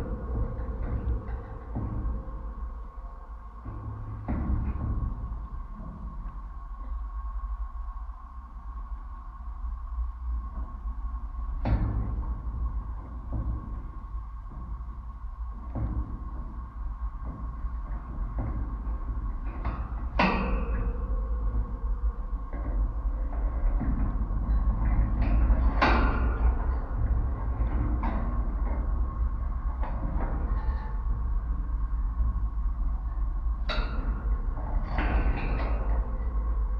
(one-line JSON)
{"title": "Jazminų gatvė, Ringaudai, Lithuania - Willow tree branches brushing against metal fence", "date": "2020-03-14 17:00:00", "description": "Dual contact microphone recording of a metal fence, placed beneath a large willow tree. Tree branches are swaying in the wind and brushing against the fence, resulting in random reverberating percussive sounds. Also, a nearby highway traffic sounds are heard through the fence as a persistent resonant drone.", "latitude": "54.88", "longitude": "23.81", "altitude": "77", "timezone": "Europe/Vilnius"}